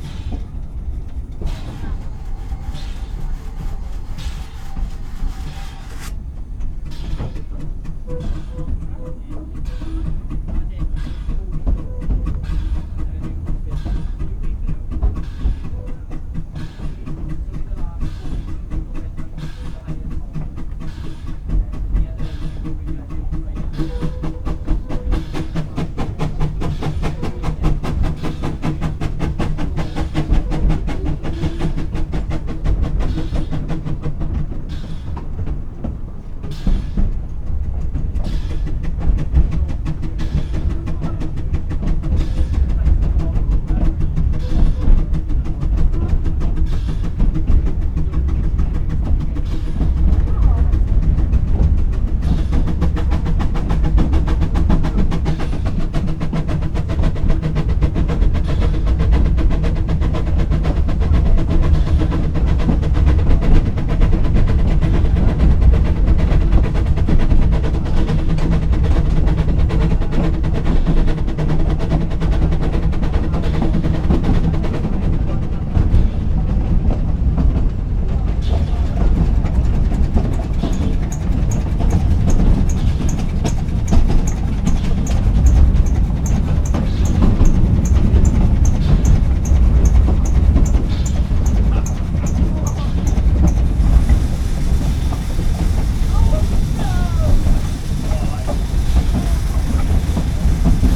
Steamers Descent from Torpantau, Merthyr Tydfil, Wales, UK - Steam Train
A narrow guage steam train makes the easy descent from the highest point on this railway in the Brecon Beacons National Park. The recorder and two mics are on the floor of the guard's van and the shotgun pointing along the length of the short train through an open doorway. There are glimpses of the Welsh accent and sounds of the train.
MixPre 3 with 2 x Rode NT5s + Rode NTG3. I always use omni capsules on the NT5s.